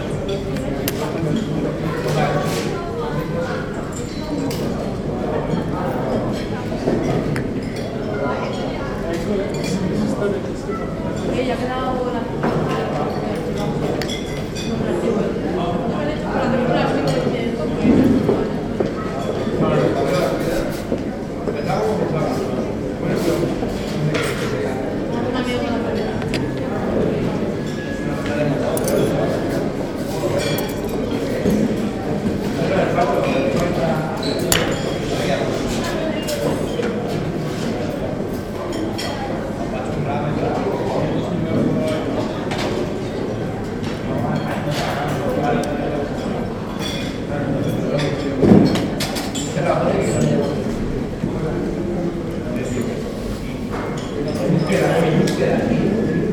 Modra CVUT Menza, lunchtime
students restaurant at the Technical Universty Prague.
February 2012